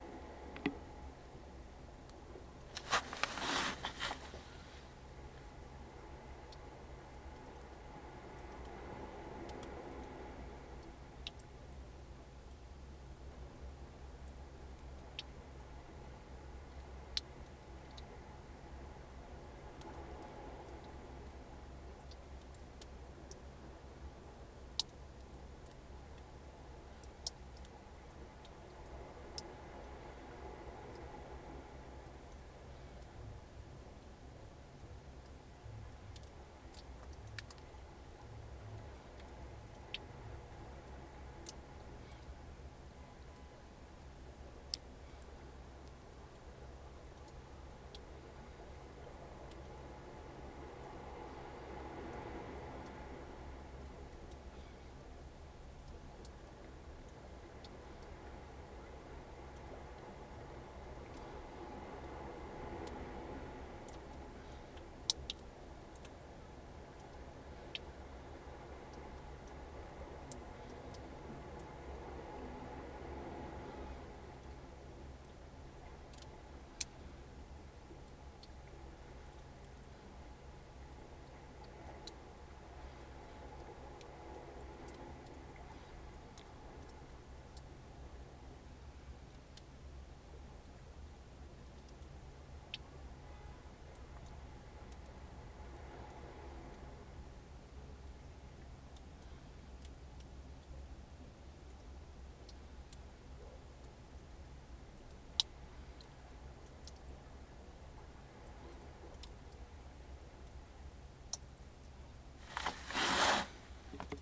Brett Avenue, Takapuna, Auckland, New Zealand - water drips in lava cave
Lava cave beside shore north of Takapuna Beach